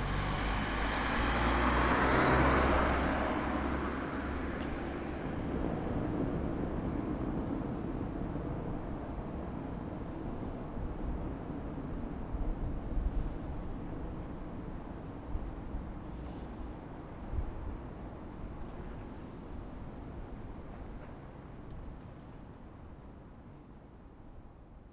Berlin: Vermessungspunkt Maybachufer / Bürknerstraße - Klangvermessung Kreuzkölln ::: 08.04.2011 ::: 04:22
Berlin, Germany, 8 April 2011, ~04:00